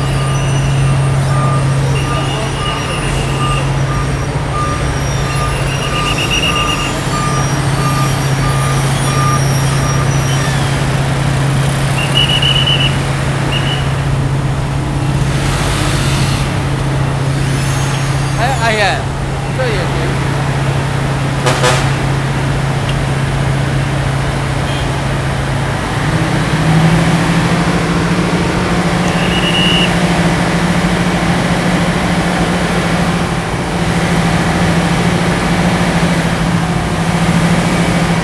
Yonghe, New Taipei City - Road construction